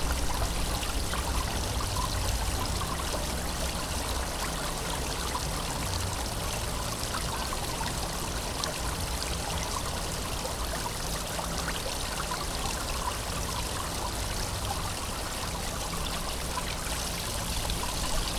fountain outside the garden centre
the federal motorway 100 connects now the districts berlin mitte, charlottenburg-wilmersdorf, tempelhof-schöneberg and neukölln. the new section 16 shall link interchange neukölln with treptow and later with friedrichshain (section 17). the widening began in 2013 (originally planned for 2011) and shall be finished in 2017.
sonic exploration of areas affected by the planned federal motorway a100, berlin.
march 12, 2016